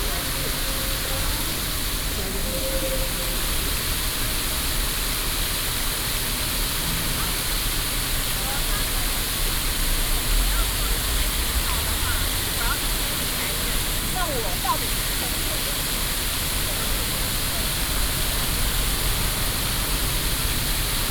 Sec., Dunhua S. Rd., Da’an Dist., Taipei City - Fountain
In the Plaza, Outside shopping mall, Taxi call area, Fountain, Traffic Sound
Sony PCM D50+ Soundman OKM II
Taipei City, Taiwan, May 2012